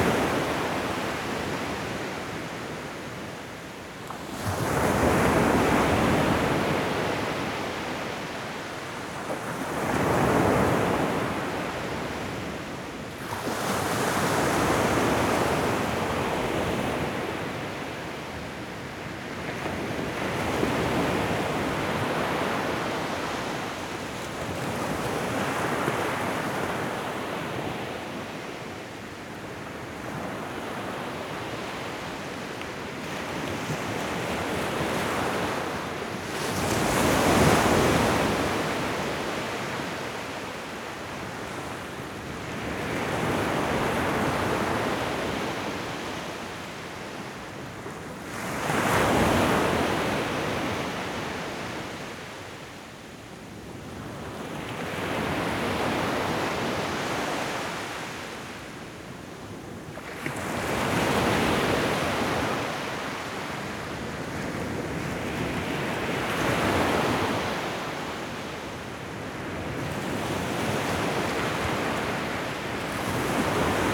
Klong Muang Beach - Wave on the beach in Thailand, at 20 meters form the water, during the night
During the night at Klong Muang Beach in Thailand, waves at 20 meters.
Recorded by an ORTF Setup Schoeps CCM4x2 in a Cinela Windscreen
Recorder Sound Devices 633
Sound Ref: TH-181019T03
GPS: 8.048667,98.758472